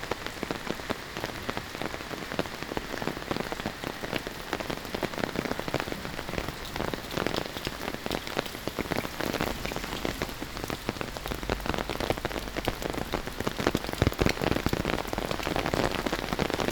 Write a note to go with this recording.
“Posting postcards, day 1 of phase 3, at the time of covid19” Soundwalk, Chapter CVIII of Ascolto il tuo cuore, città. I listen to your heart, city. Monday, June 15th 2020. Walking to mailbox to post postcard, San Salvario district, Turin, ninety-seven days after (but day forty-three of Phase II and day thirty of Phase IIB and day twenty-four of Phase IIC and day 1st of Phase III) of emergency disposition due to the epidemic of COVID19. Start at 8:19 p.m. end at 8:40 p.m. duration of recording 20’39”, As binaural recording is suggested headphones listening. The entire path is associated with a synchronized GPS track recorded in the (kml, gpx, kmz) files downloadable here: This is the first day and first recording of Phase III of the COVID-19 emergency outbreak.